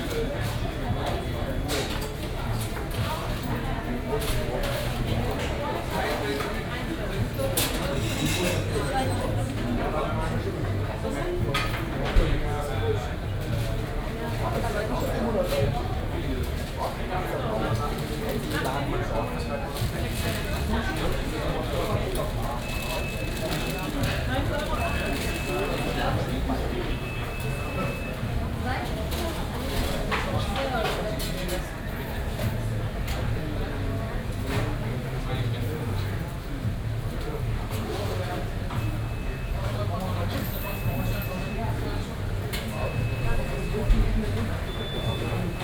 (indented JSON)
{
  "title": "Bielefeld, Hauptbahnhof, main station - fast food restaurant",
  "date": "2012-04-20 18:30:00",
  "description": "Bielefeld, Hauptbahnhof, main station. noisy atmosphere at MacDonalds, beeping sounds all over.\n(tech note: Olympus LS5, OKM2+A3, binaural)",
  "latitude": "52.03",
  "longitude": "8.53",
  "altitude": "118",
  "timezone": "Europe/Berlin"
}